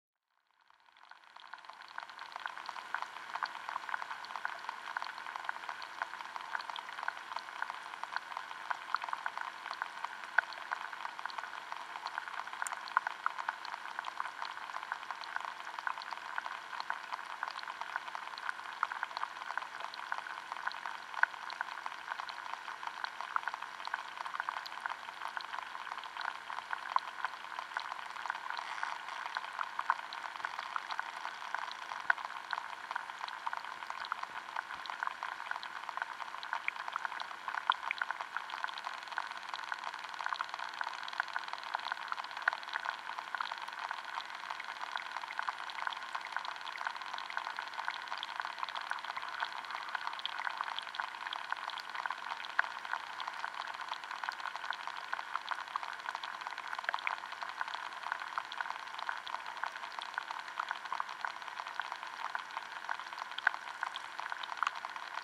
hydrophone recording in Mooste lake Estonia